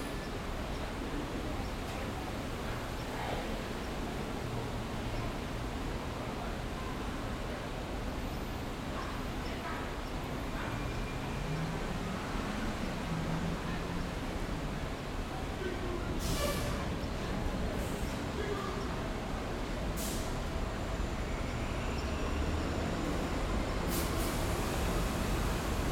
Broadway, Brooklyn, NY, USA - M Train and Rain
Waiting for the M train on Marcy Avenue, Brooklyn.
Heavy rain and thunder.
Zoom h6
New York, USA